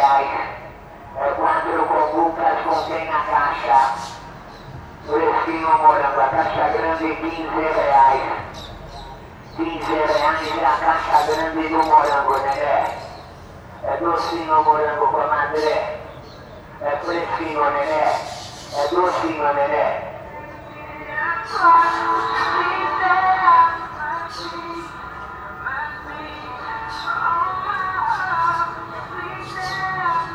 Aclimação, São Paulo - Seller from his truck announcing some strawberries
From the window of the flat, recording of a seller of strawberries above the park Aclimaçao, Sao Paulo.
Recorded by a binaural Setup of 2 x Primo Microphones on a Zoom H1 Recorder